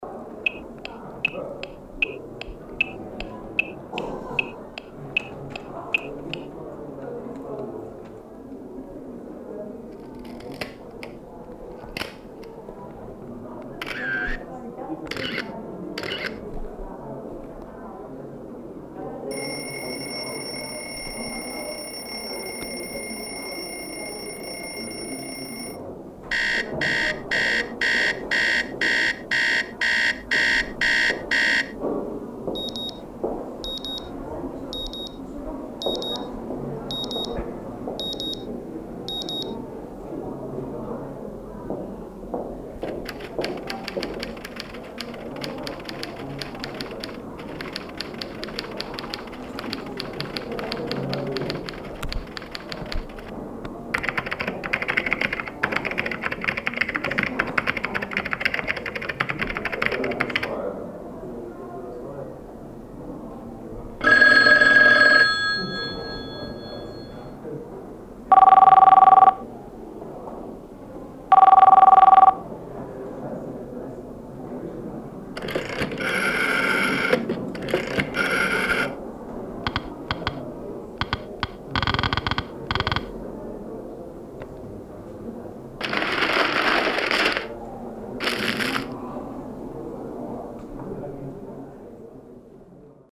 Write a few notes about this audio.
exhibition oggetti Sonori - presenting sound design and changes in history - here comparisments of acoustic originals and digital substitutes, soundmap d - social ambiences and topographic field recordings